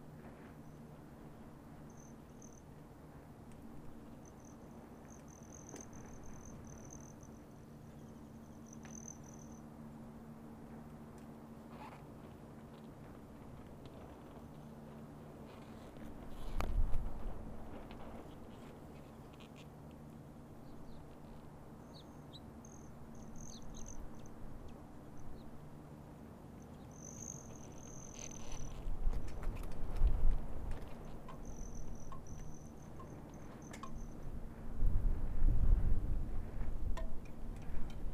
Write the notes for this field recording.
definitely a panting super hot puppy crashed in the shade also... zoomh4npro